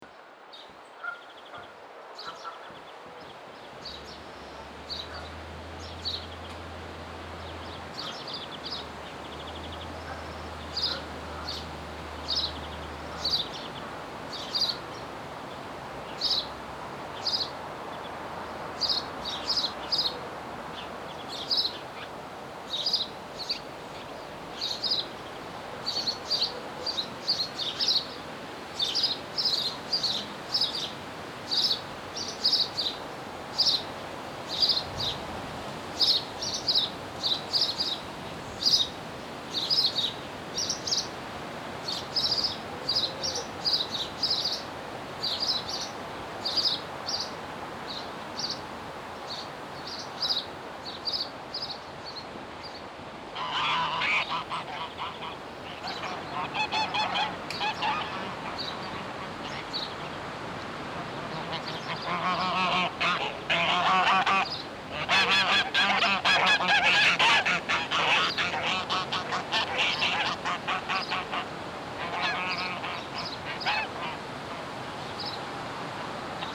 Ulflingen, Luxemburg - Nature path Cornelys Millen, bird listenining station
Auf den Naturpfad Cornelys Millen, in einer Holzhütte, die hier für Wanderer eingerichtet wurde, die sich die Zeit nehmen den Vogelstimmen auf und um den nahen Teich zu lauschen.
On the nature path Cornelys Millen, inside a wooden hut, that has been constructed for walkers that take the time here to listen to the birds at and around the nearby pond.
Luxembourg, 6 August 2012, ~17:00